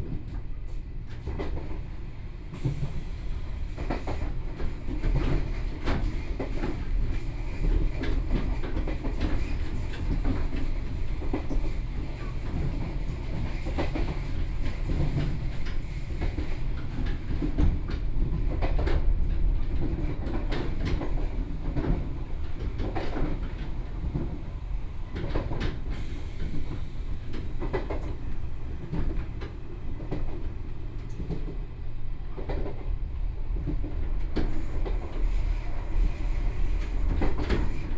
inside the Tze-Chiang Train, from Zhongli station to Taoyuan station, Zoom H4n + Soundman OKM II
Taoyuan County, Taiwan, 12 August, 15:33